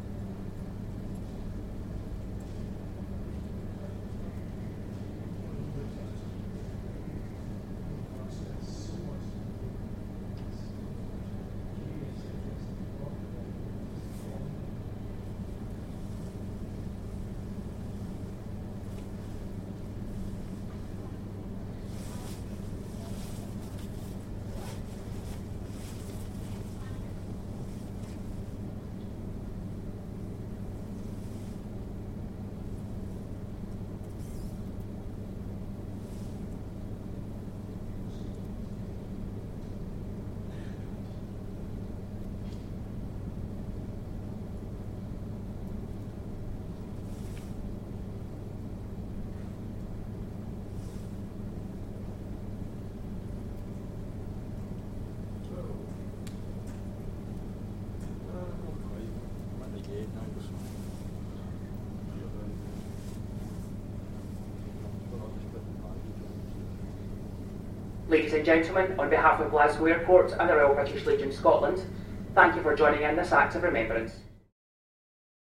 Glasgow International Airport (GLA), Paisley, Renfrewshire, Verenigd Koninkrijk - 11-11-11 memorial day
2 minutes silence at Glasgow airport to remember the end of the first world war